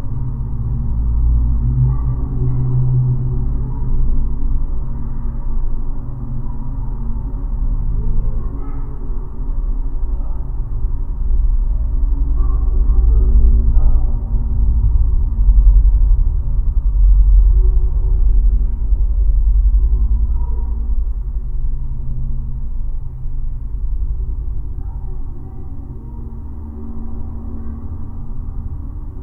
metallic sculpture of a fish on a bank. geophone recording: town in low frequencies